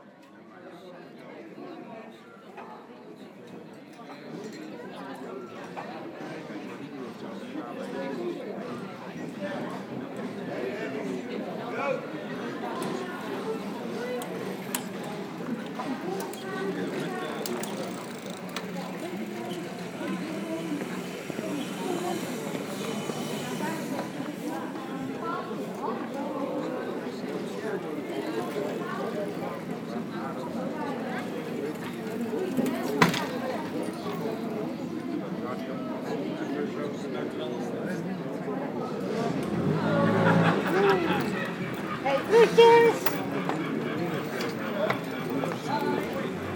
Katwijk-Aan-Zee, Nederlands - Bar terrace and sunny afternoon
Katwijk-Aan-Zee, Taatedam. Lively discussions on the terrace during a very sunny afternoon.
Katwijk aan Zee, Netherlands, 29 March 2019